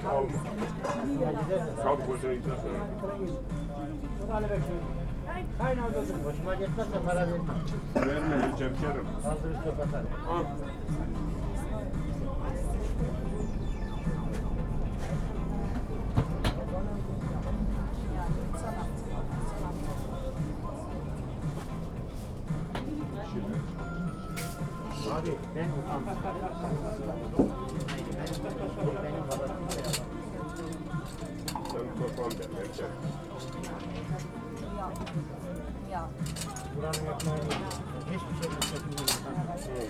berlin, werbellinstraße: flohmarkt, imbiss - the city, the country & me: flea market, snack stall
woman putting bottles in the fridge, venders and visitors of the flea market
the city, the country & me: april 17, 2011